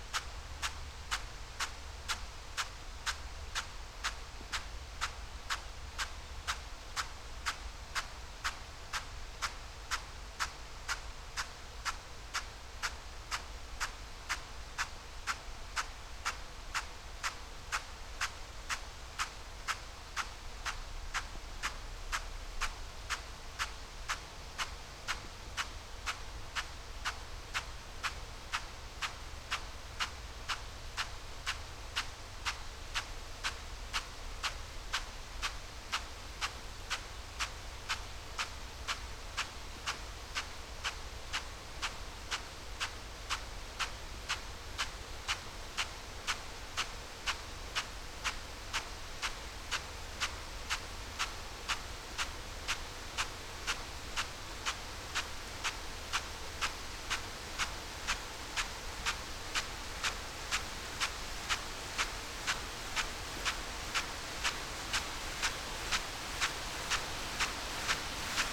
Malton, UK - potato irrigation ...
potato irrigation ... bauer rainstar e 41 to irrigation sprinkler ... xlr sass on tripod to zoom h5 ... on the outside of the sprinkler's arc as it hits the plants and trackway with its plume of water ... no idea why find this so fascinating ... must be old age and stupidity in abundence ...